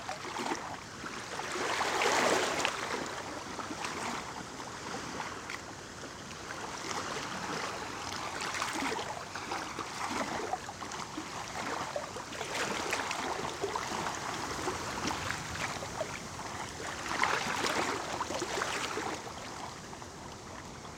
lake Juodieji Lakajai, Lithuania
strong wind howling. the microphones hidden in the grass at the lake.